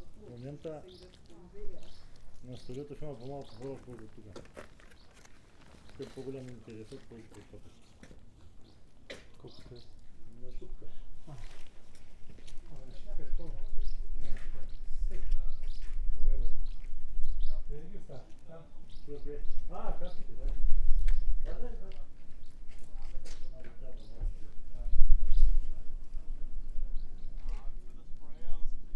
Buzludzha, Bulgaria, Drone - In front of Buzludzha - monologue
A security man is reasoning on Bulgarian about the building and the state of the society in Bulgarian. The swallows are singing, some cars in the background of austrian tourists... this is a recording with two microphones